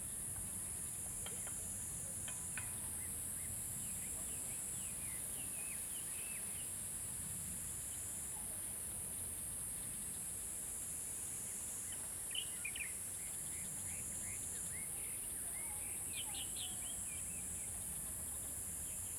埔里鎮桃米里, Taiwan - In the mountains
In the mountains, Bird sounds
Zoom H2n MS+XY
Nantou County, Taiwan, 12 July 2016